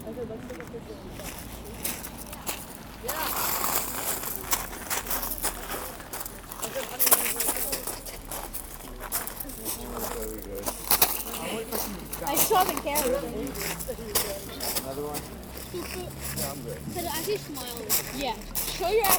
Chartres, France - Tourists behind the cathedral
Cathedral of Chartres - In the gravels bordering the cathedral gate, people walk quietly. A group of American tourists achieves a long selfie session, which requires appreciation and approval of every protagonist. We are simply there in the everyday sound of Chartres.
31 December 2018